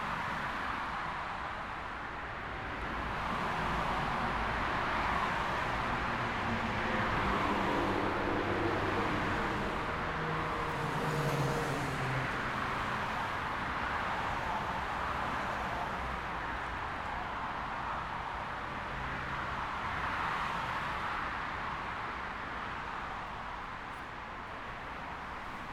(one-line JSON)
{"title": "Nærum, Denmark - Vehicles on highway", "date": "2021-10-07 13:15:00", "description": "Recording of vehicles passing on highway under a concrete overpass/bridge. MS recording with a Zoom H5 and the MSH-6 head. Figure 8 microphone oriented parallel to the road. Converted to stereo. No extra processing.", "latitude": "55.80", "longitude": "12.53", "altitude": "18", "timezone": "Europe/Copenhagen"}